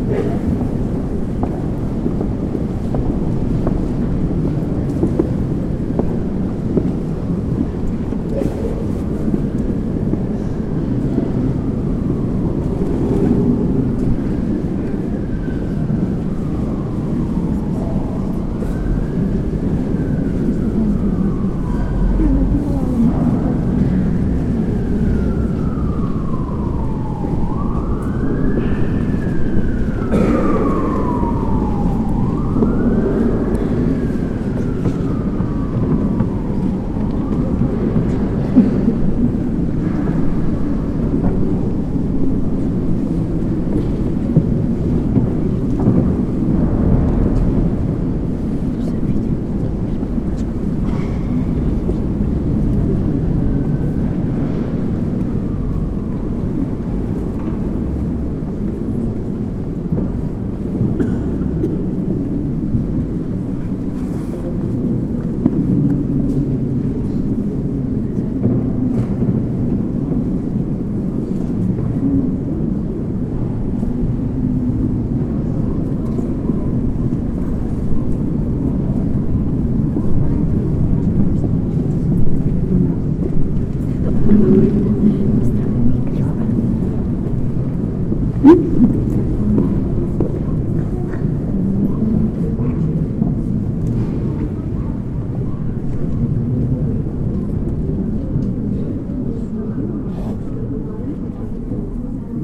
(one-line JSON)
{"title": "Kostel svatého Ignáce Velikonoční mše - Easter Mass, people leaving the church", "date": "2013-03-28 19:19:00", "description": "The end of a worship in the church of Saint Ignatius at the Charles Square. Last evening before Easter during the Mass the bells sounds and after they get silent -\"fly to Rome\". The sound of bells until the Great Friday vigilia is replaced by clappers and rattles. During the Mass celebrated by the Jesuits, whom the church belongs was rattling discreetly suggested by two boys rhythmically klicking during the procession to the altar.", "latitude": "50.08", "longitude": "14.42", "altitude": "217", "timezone": "Europe/Prague"}